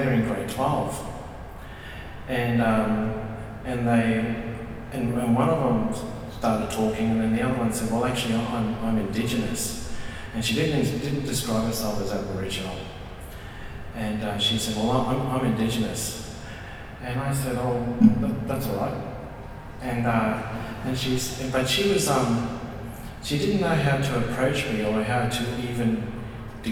neoscenes: Talking Blak - Tony Birch - neoscenes: Talking Blak - Vernon Ah Kee